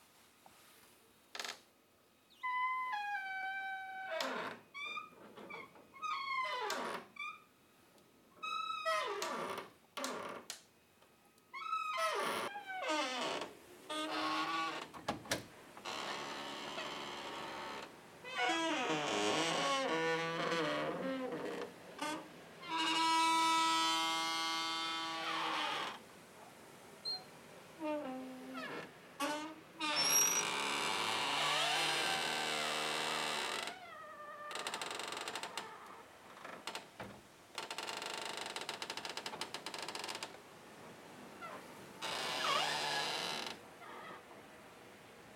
Delpinova ulica, Nova Gorica - door